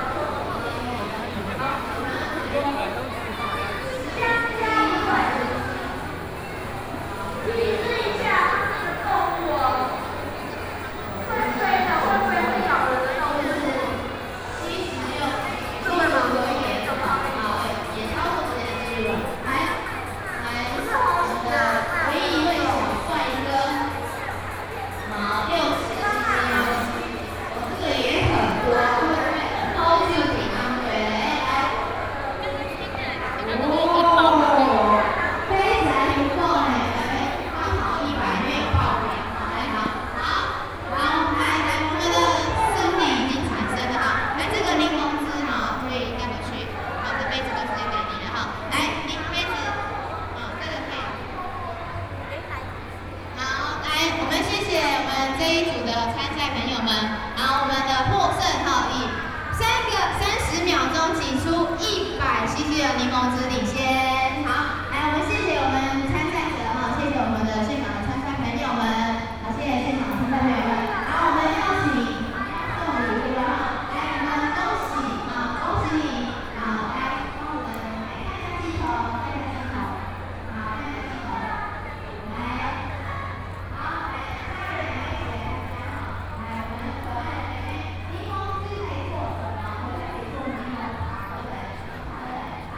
Taipei Expo Park - SoundWlak
Holiday Bazaar, Sony PCM D50 + Soundman OKM II